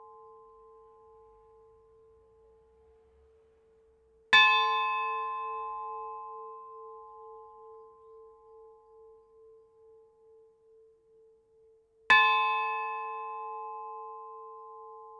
MillonFosse - Département du Nord
Le Calvaire.
Tintement.